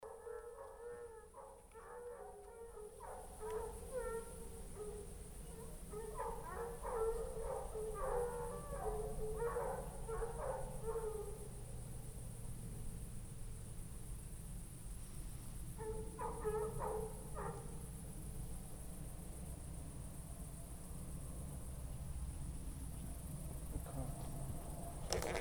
howling dogs while approaching mas-le-pouge - KODAMA walk
Recording made during KODAMA residency, La Pommerie, September 2009
france